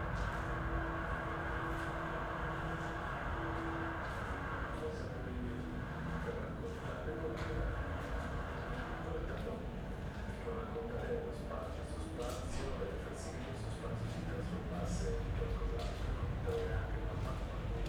on the stairs below Via Capuano, weekend night, sound of a TV through an open window, someone climbs up the stairs and enters a door.
(SD702, AT BP4025)